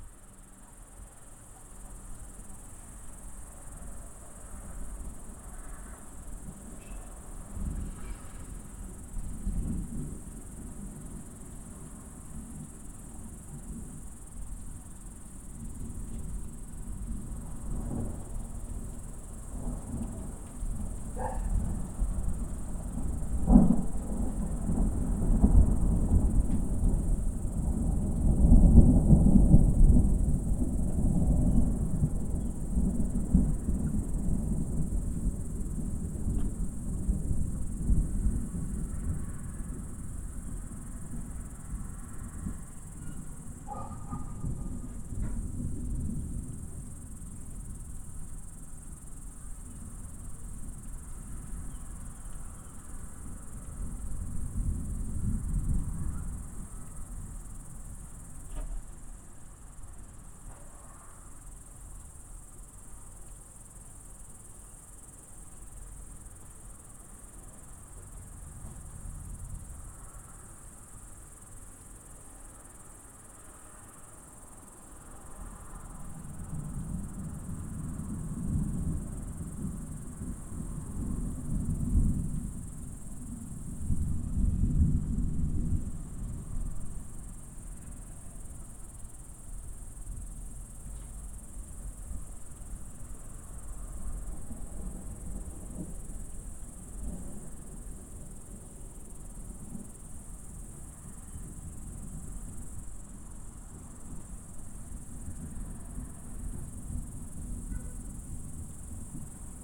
Passu, Räpina, Estonia - impending storm
rolling thunder of an impending storm moving over the farmland outside of the town of räpina, recorded from our balcony at dusk.